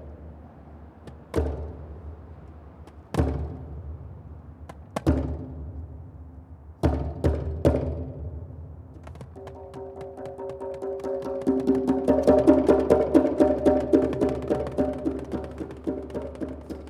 Under the GW Parkway on Teddy Rosevelt - Drums, Ocarina, Call/Resp Improv #1
Instruments: Boucarabou (Senegal), Darbuka (Moroccan), Ocarina (Ecuador). Recorded on DR-40